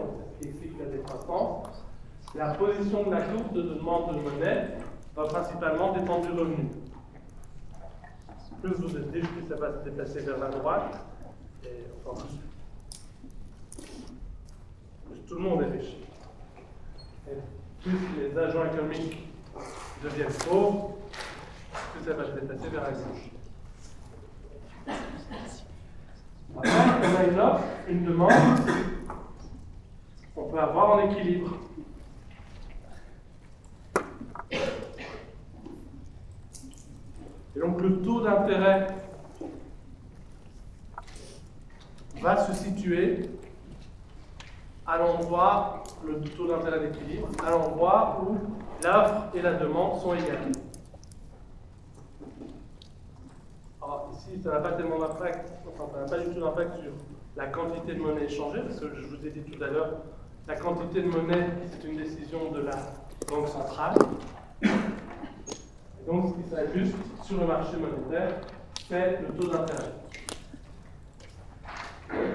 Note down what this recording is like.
In the big Agora auditoire, a course of economy.